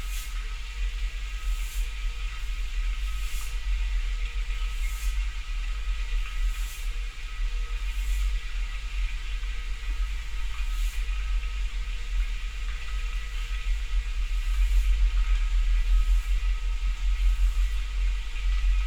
항아리들 속에 within clay urns
among a collection of clay urns beside a hanok in the Damyang bamboo forest area...2 narrow mouth-piece water jugs...
전라남도, 대한민국, 28 April 2022